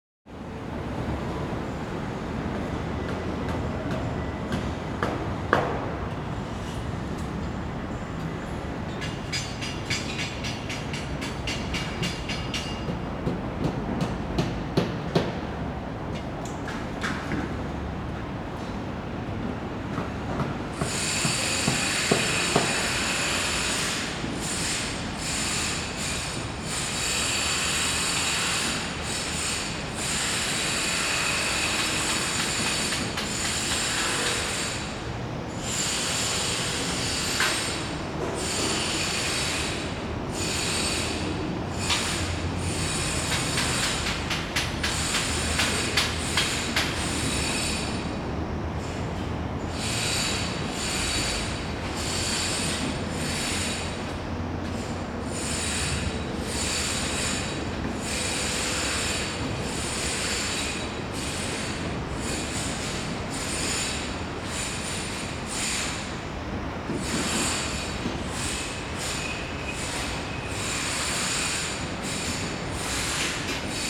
Ln., Sec., Beiyi Rd., Xindian Dist., New Taipei City - Sound of construction
Sound of construction
Zoom H4n + Rode NT4
Xindian District, New Taipei City, Taiwan, December 2011